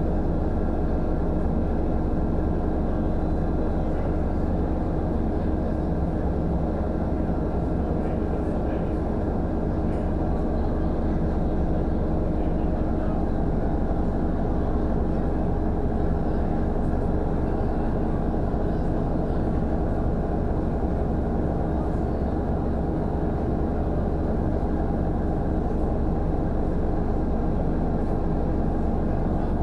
Igoumenitsa, Greece - Road to Corfu